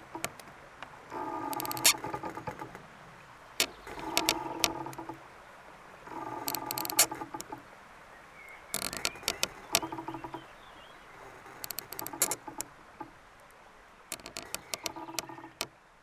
Oberwampach, Luxembourg - Spruce crunches
Two collapsed spruce trees, posed on a big alive spruce. The very small wind makes some quiet crunches on the barks.